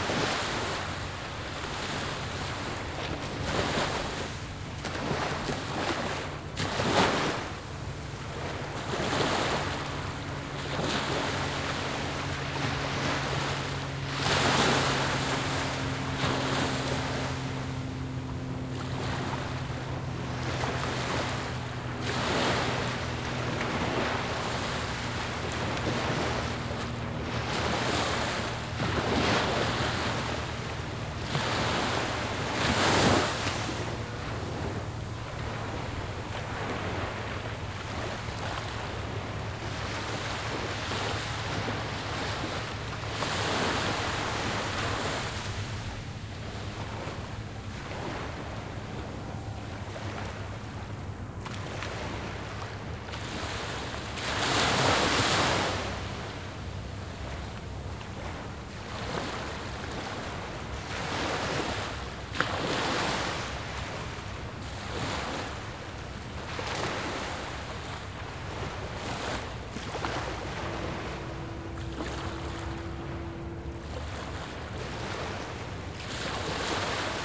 Brooklyn, NY, USA - Coney Island Creek Park 2
Coney Island Creek Park.
Zoom H4n